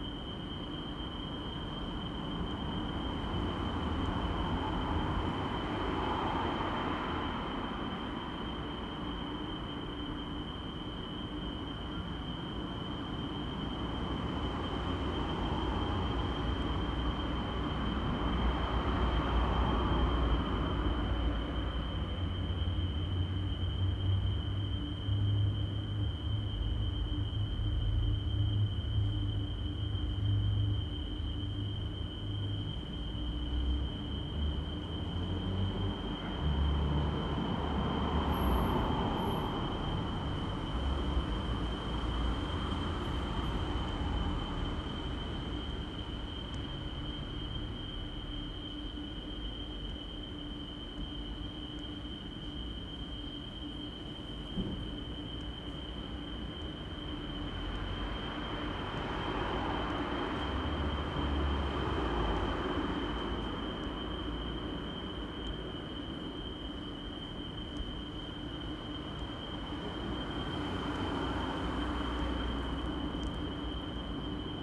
Ritterstraße, Berlin, Germany - Inside the closed iron Ritterhof gates a distant alarm rings forever
The arch into Ritterhof is closed by very impressive iron barred gates. One can only stand and look through while the traffic behind speeds past. An alarm rings forever. Two magpies fly over in silence.